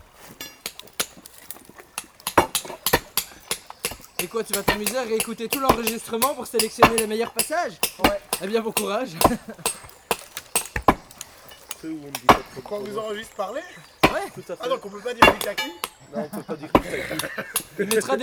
One of the citizen acts of the scouts on this "green day", as they call it, was to repair a broken bridge in a small river called Ry d'Hez. This broken bridge was doing lot of problems, as in first obstructions in the river. On this morning, adult scouts try to break the concrete bridge, dating from the fifties. It's a very difficult work, as no machines can come in this isolate place. All work is done with crowbars. Scouts are courageous.
Court-St.-Étienne, Belgium, April 2016